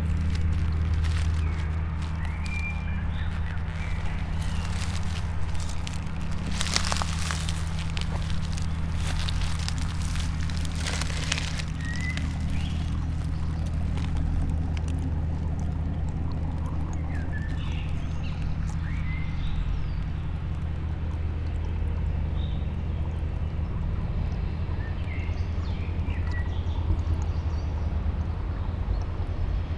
fourth pond, piramida, maribor - almost inaudible stream spring poema
Maribor, Slovenia, 14 April 2014